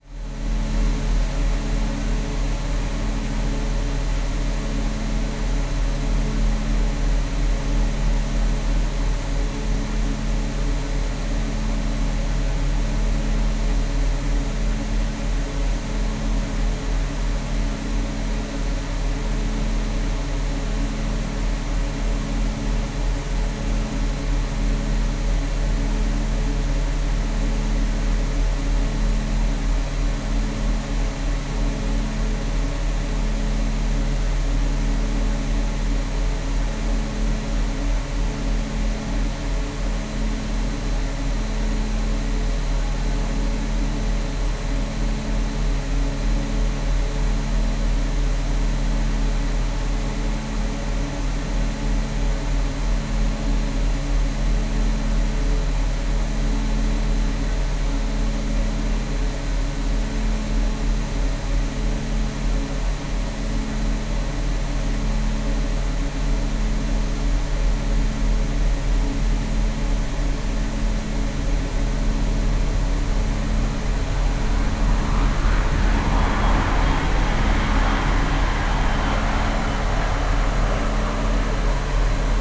Kerpen, Buir, Deutschland - solar power station
solar / photovoltaic power station hum, solar panels stretch about 1km alongside new and not yet finished A4 motorway and heavy duty train line of RWE power. both facilities are neccessary due to the extension of the Hambach opencast lignite / brown coal mine.
(Sony PCM D50, DPA4060)
Kerpen, Germany, 27 August, ~18:00